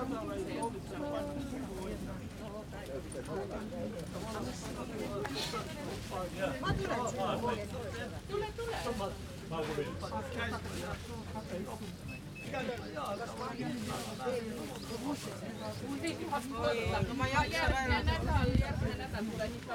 {"title": "Kallaste, Kreis Tartu, Estland - Kallaste, Estonia - Market", "date": "2013-07-05 09:42:00", "description": "Kallaste, Estonia - Market.\n[Hi-MD-recorder Sony MZ-NH900 with external microphone Beyerdynamic MCE 82]", "latitude": "58.66", "longitude": "27.16", "altitude": "42", "timezone": "Europe/Tallinn"}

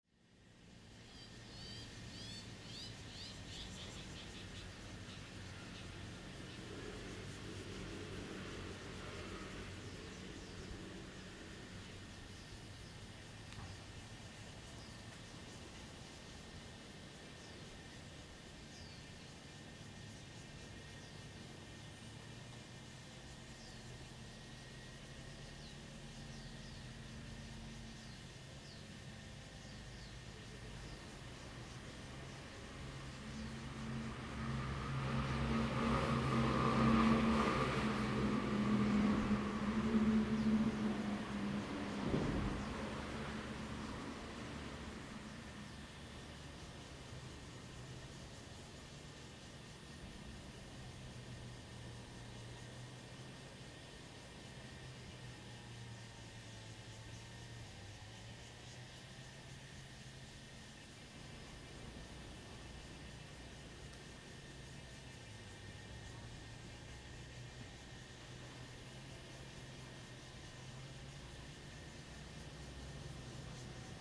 {"title": "鹽寮村, Shoufeng Township - Old seating area", "date": "2014-08-28 18:22:00", "description": "Old seating area, Traffic Sound, Small village\nZoom H2n MS+XY", "latitude": "23.87", "longitude": "121.60", "altitude": "21", "timezone": "Asia/Taipei"}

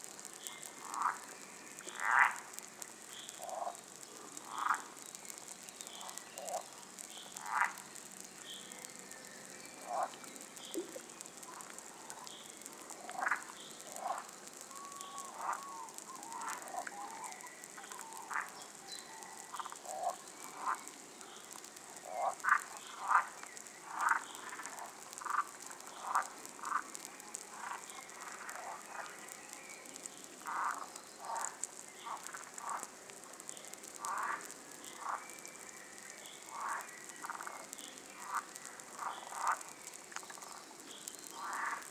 {"title": "Stabulankiai, Lithuania, frog bubbles", "date": "2020-05-04 17:20:00", "description": "omni microphones just over the water...frogs eggs bubbling and tadpoles churning in the water", "latitude": "55.52", "longitude": "25.45", "altitude": "168", "timezone": "Europe/Vilnius"}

